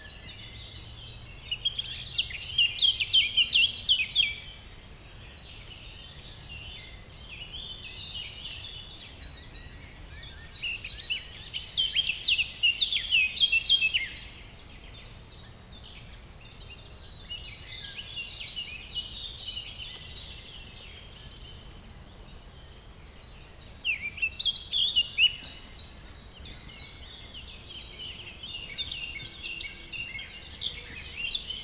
Veuvey-sur-Ouche, France - Bouhey forest
Passing through the Bouhey forest in Veuvey-Sur-Ouche, a clearing was full of birds shouts. This happy landscape made me think to put outside the recorder. Although the site is drowned by a significant wind, springtime atmosphere with Eurasian Blackcap and Common Chiffchaff is particularly pleasant. Regularly hornbeam branches clashes.